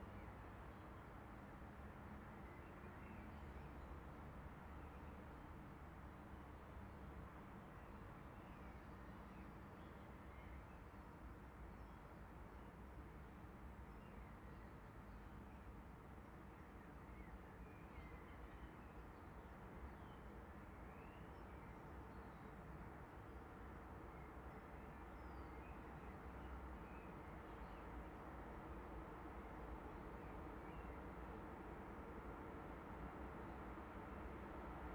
Waldheim, Hannover, Deutschland - Hannover - Trains passing
Several trains passing, slight editing: shortened
[Hi-MD-recorder Sony MZ-NH900, Beyerdynamic MCE 82]
Hannover, Germany, 29 May